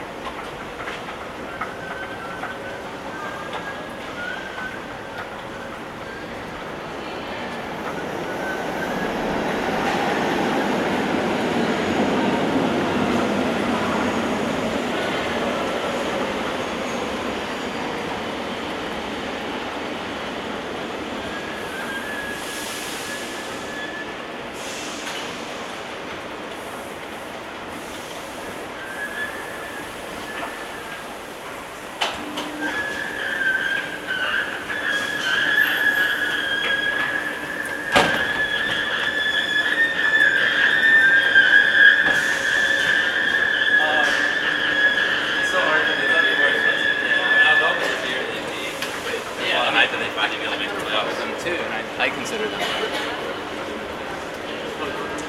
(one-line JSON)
{"date": "2006-06-20 07:44:00", "description": "Brussels, Midi Station, screaming escalator.\nUne prise de son en souterrain, à Bruxelles, sortie de métro Gare du Midi, prendre lescalator qui va vers lAvenue Fonsny, il émet de bien belles stridences.", "latitude": "50.84", "longitude": "4.34", "altitude": "28", "timezone": "Europe/Brussels"}